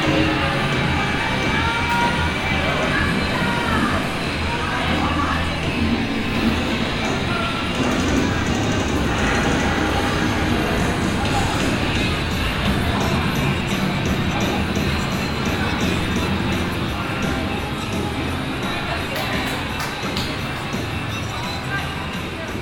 On the second floor of a huge game hall house at the edge of chinatown. The sound of many and different console games playing simultaneously. In the background some pop music trying to come through.
international city scapes - topographic field recordings and social ambiences